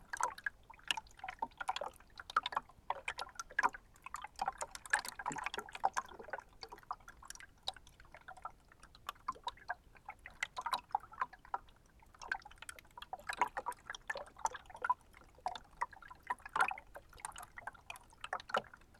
Lithuania, Utena, stream in rift
small iced river, but theres some opening/rift which strangely reverberate stream sound